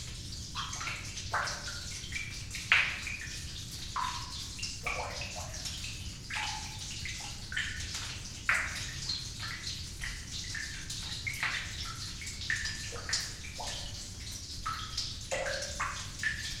Palysiu pond, Lithuania, in the well
some well at the pond. small omni mics inside
Utenos apskritis, Lietuva, 26 April 2020